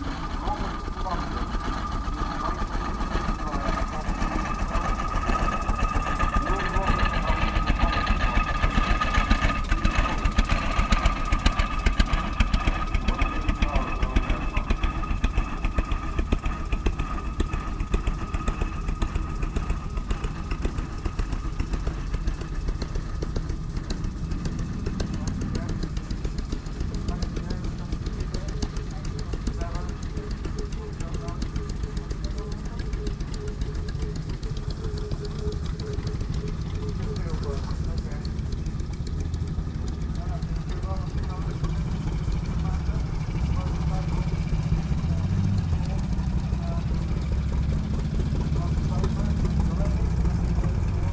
Oxford Rd, Reading, UK - Vintage and Classic motorbikes at Woodcote Steam Rally
Recorded at the Woodcote Steam Rally, lovely event where steam traction engines, steamrollers and a myriad of similar restored vehicles are on show, and have their turn at parading around the show ground. This recording is of the motorcycles doing their round, with a commentary to fit. Sony M10 with built-in mics.
14 July, ~17:00